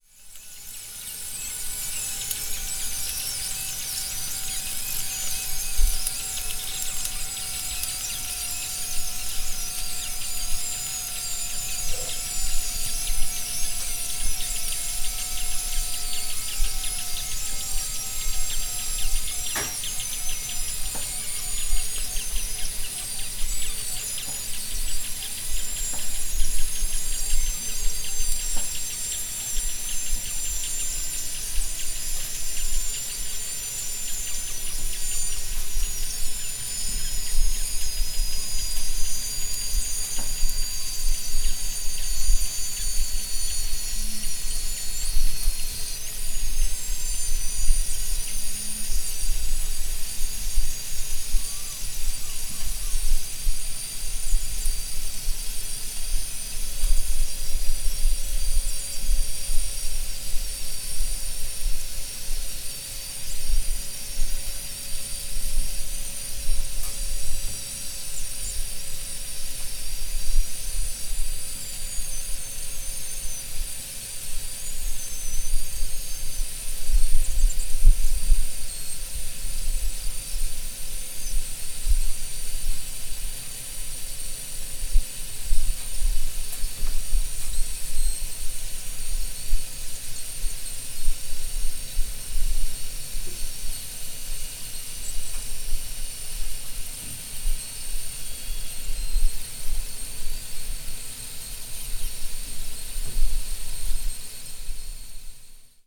stir-frying onions and garlic for soup